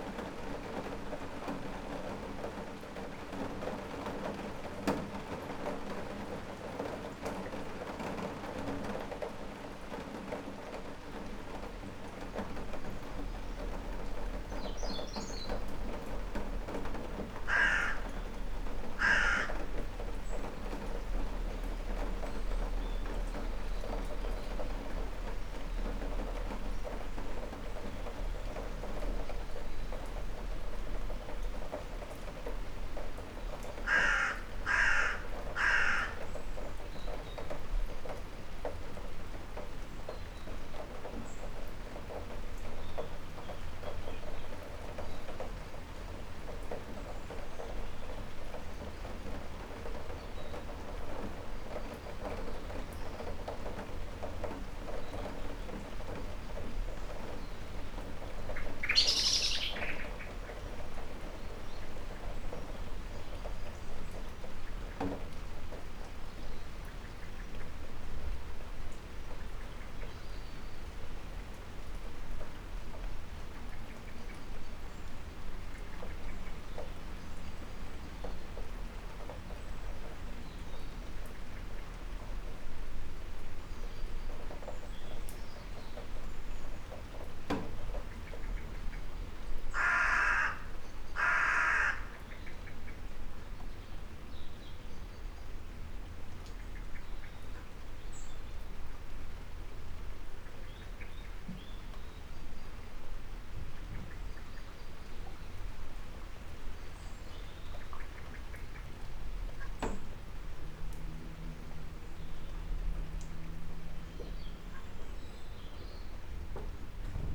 Storm, Malvern, UK
A real-time experience of a storm front that crossed England from the south, the right side of the sound image. The recorder was inside my garage with the metal door open in the horizontal position catching the rain drops. I can be heard in the first moments frantically sweeping out the flood water flowing down the drive from the road above. This is unashamedly a long recording providing the true experience of a long event.
The recorder and microphones were on a chair up under the door to avoid the gusty rain and protected inside a rucksack. The mics were in my home made faux fur wind shield. I used a MixPre 6 II with 2 sennheiser MKH 8020s.